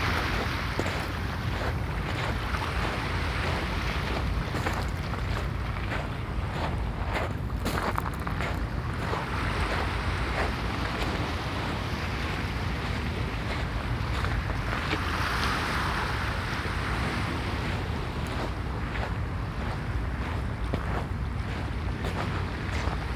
waves on stony beach, footsteps in shingle